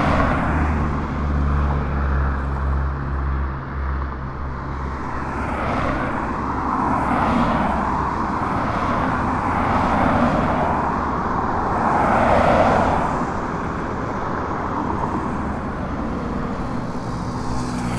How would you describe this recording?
Vehículos pasando por el libramiento (Blvd José María Morelos). I made this recording on February 22, 2020, at 7:03 p.m. I used a Tascam DR-05X with its built-in microphones and a Tascam WS-11 windshield. Original Recording: Type: Stereo, Esta grabación la hice el 22 de febrero 2020 a las 19:03 horas.